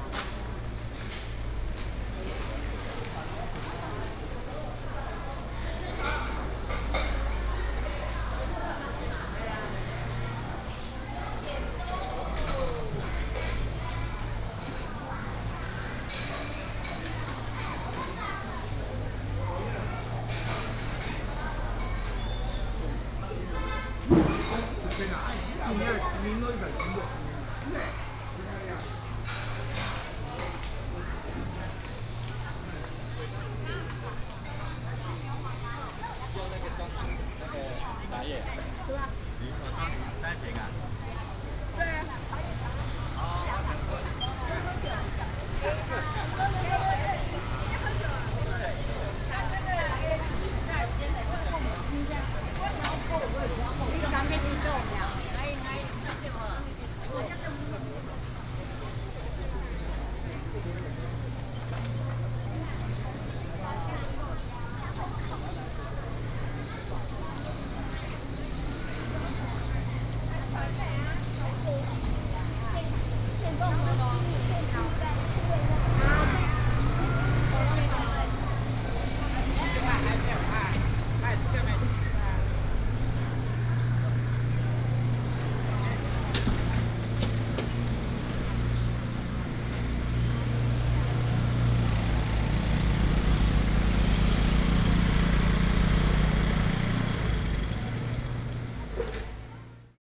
Walking in the traditional market, Traffic sound, Road construction sound
Hsinchu County, Taiwan, August 2017